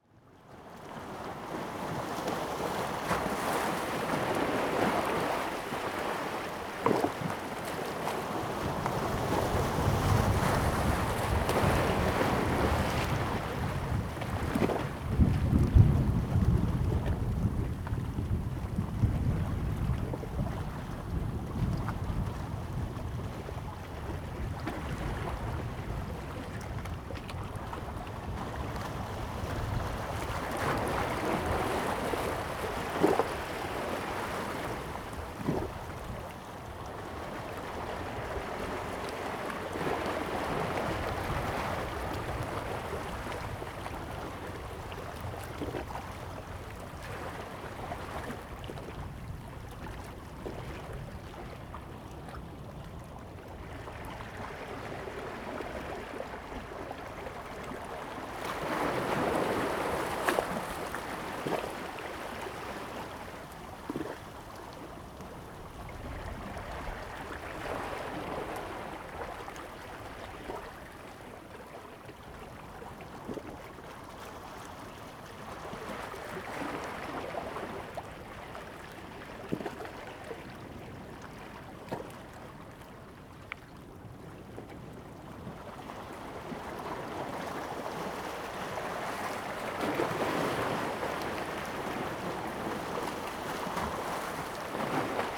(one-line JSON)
{"title": "烏石鼻, Taiwan - Waves and rocks", "date": "2014-09-08 15:07:00", "description": "Waves and rocks, Thunder sound\nZoom H2n MS +XY", "latitude": "23.23", "longitude": "121.42", "altitude": "7", "timezone": "Asia/Taipei"}